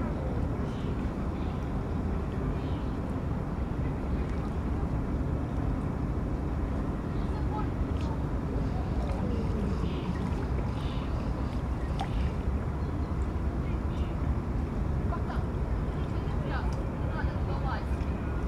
Dnieper river, Dnipro, Ukraine - Dnieper river [Dnipro]